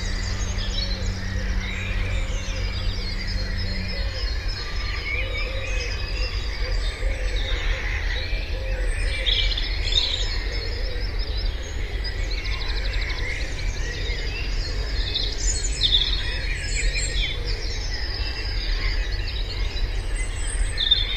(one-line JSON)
{"title": "Dawn Chorus with Fog Horns at Sea", "latitude": "51.79", "longitude": "1.04", "altitude": "11", "timezone": "GMT+1"}